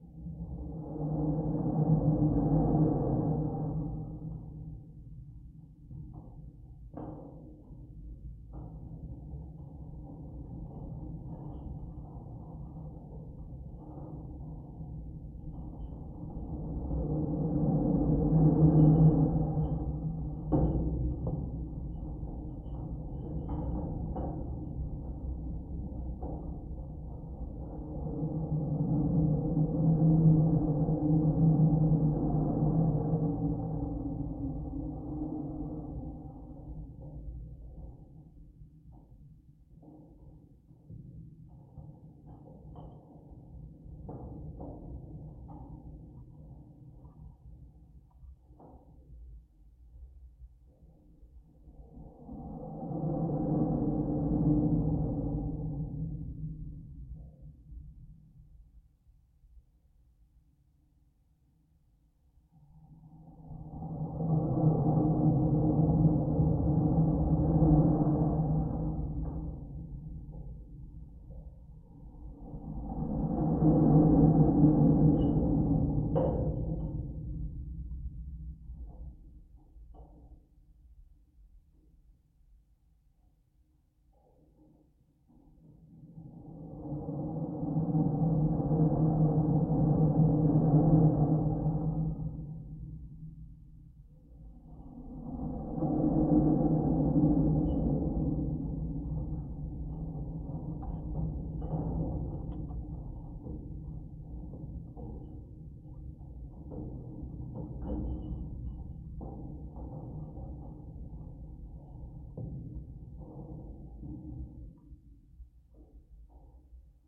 Grand Glaize Bridge, Valley Park, Missouri, USA - Grand Glaize Bridge
Recording from geophone attached to metal fitting of fence to bridge over Grand Glaize Creek.
Missouri, United States, 8 August 2021, ~15:00